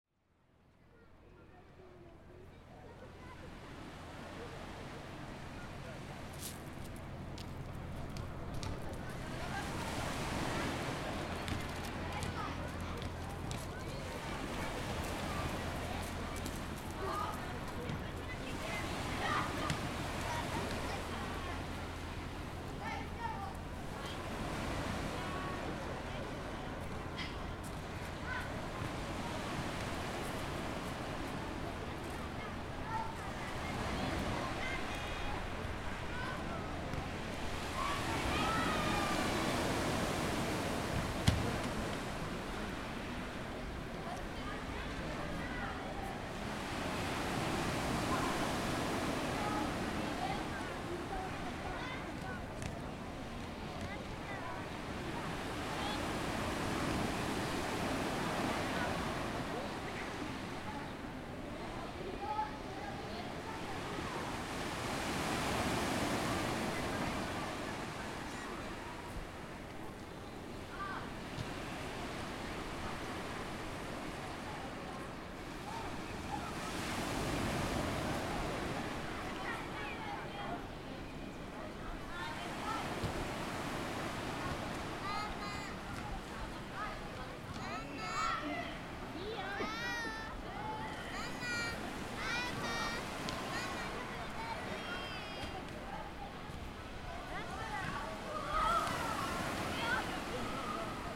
Camogli Genua, Italien - Feierabendsiesta
Feierabendstimmung an der Küste von Camogli. Das Glockenspiel der Kirche um 17.30 Uhr läutet den Abend ein. Meeresrauschen lädt zum Träumen ein.
Camogli Genoa, Italy, 29 March, 17:30